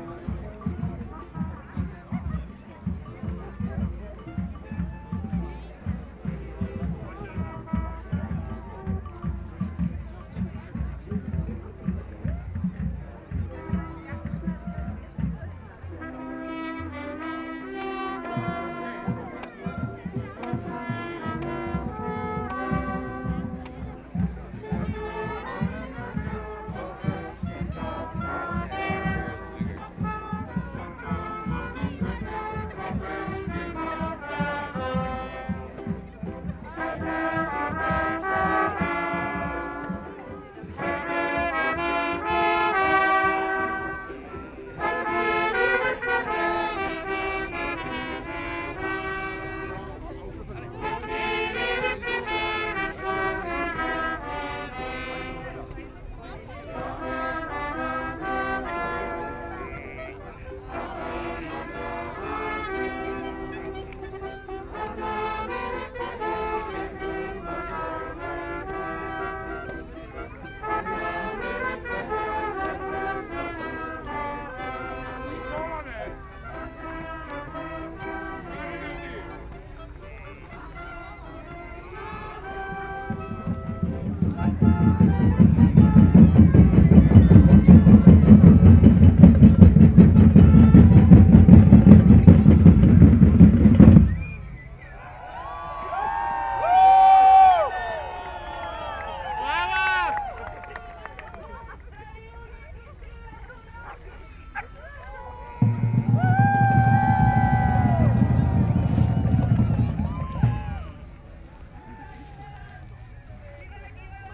Carneval, masopust
Masopust celebration with sheep flock